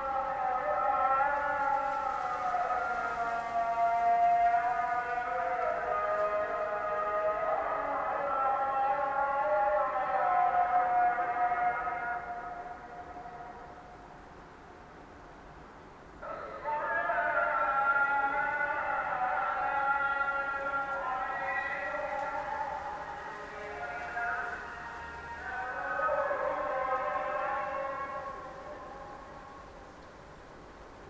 Dikkaldırım Mahallesi, Büklüm Cd., Osmangazi/Bursa, Turkey - morning prayer
waking up with a special morning prayer.
16 July, 04:48